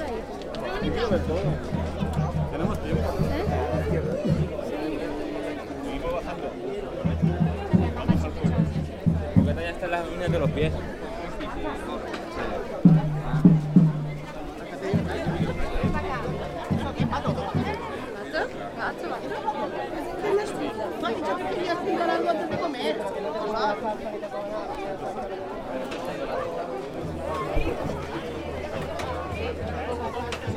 Calle Castell, Illes Balears, Spanien - Capdepera Soundwalk Medieval Festival
market stalls, visitors from many countries, musicians at the entrance of the castle, food stand with barbecue and drinks under palm trees, various stations with old wooden children's games, an old small children's carousel pushed by hand with a bell. // soundwalk über ein mittelalterliches Fest, Besucher aus vielen Ländern, Marktstände, Musiker im Eingang der Burg, Essenstand mit Gegrilltem und Getränken unter Palmen, verschiedene Stationen mit alten Kinderspielen aus Holz, ein altes kleines Kinderkarusell von Hand angeschoben mit einer Glocke.
21 May 2017, 14:00